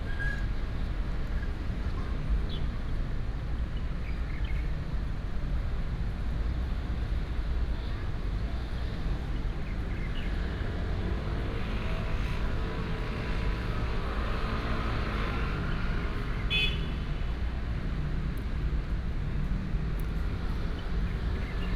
Miaoli County Government, 苗栗市 - In the Plaza
bird sound, Traffic sound